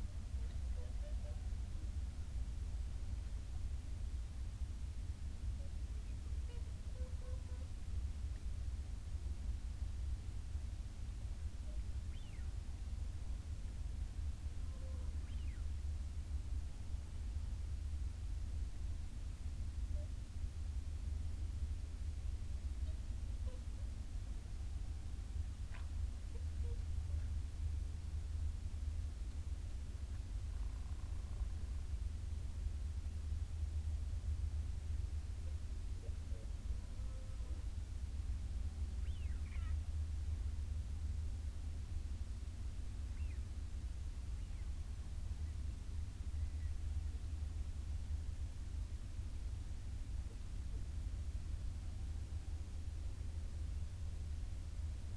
Dumfries, UK - whooper swan soundscape
whooper swan soundscape ... dummy head with binaural in the ear luhd mics to zoom ls14 ... bird calls from ... canada geese ... shoveler ... snipe ... teal ... wigeon ... mallard ... time edited unattended extended recording ...